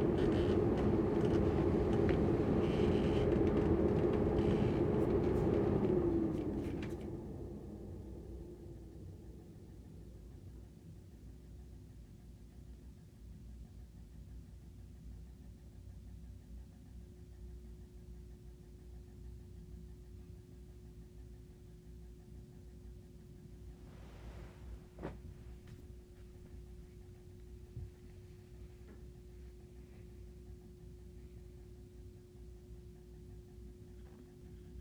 The central heating in Nova Scotian houses is not by radiators but by warm air blown through vents in each room. It's a completely different sounding system to get used to late at night and in the early morning. The contrast between 'on' and 'off' is the contrast between awake and sleep.
Halifax, NS, Canada, 21 October 2015, ~06:00